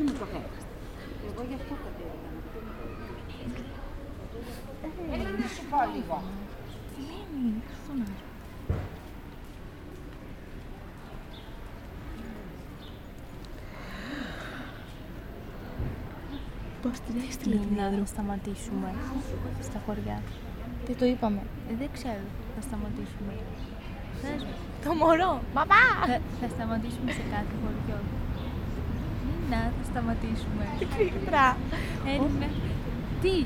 Αβέρωφ, Μέτσοβο, Ελλάδα - Morning in the church
Record by : Alexandros Hadjitimotheou
Αποκεντρωμένη Διοίκηση Ηπείρου - Δυτικής Μακεδονίας, Ελλάς, 3 August, 12:58